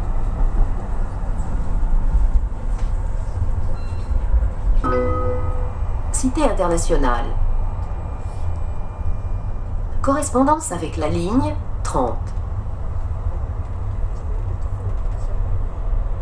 Agn s at work//RadioFreeRobots